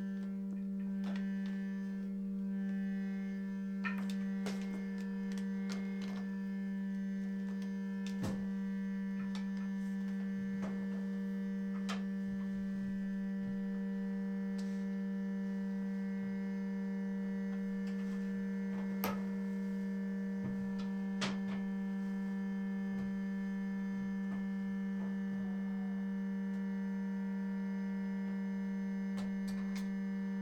Sound Room In Marjaniemi, Hailuoto, Finnland - line tilt installation 03
Hailuoto, Finland, 24 May 2012